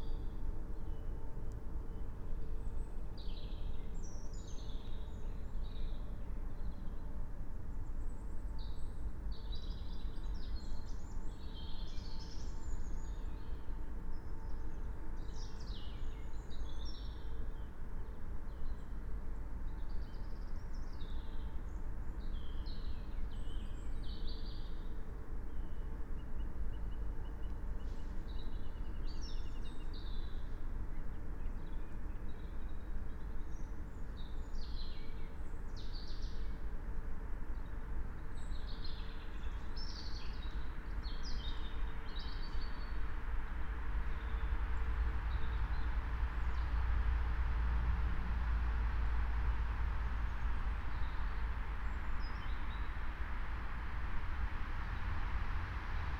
21:16 Lingen, Emsland - forest ambience near nuclear facilities